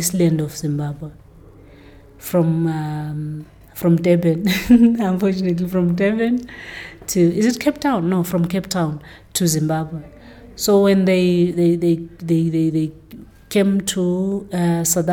Amakhosi Cultural Centre, Old Falls Rd, Bulawayo, Zimbabwe - Thembi Ngwabi talks history in her new production…
I had been witnessing Thembi training a group of young dancers upstairs for a while; now we are in Thembi’s office, and the light is fading quickly outside. Somewhere in the emptying building, you can still hear someone practicing, singing… while Thembi beautifully relates many of her experiences as a women artist. Here she describes to me her new production and especially the history it relates…
Thembi Ngwabi was trained as an actress at Amakhosi and also become a well-known bass guitarist during her career; now she’s training young people as the leader of the Amakhosi Performing Arts Academy APAA.
The complete interview with Thembi Ngwabi is archived at:
29 October